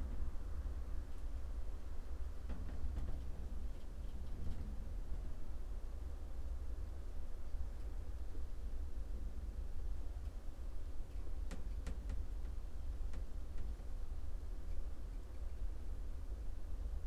quarry, Marušići, Croatia - void voices - stony chambers of exploitation - crane cabine
Istra, Croatia, 28 December 2012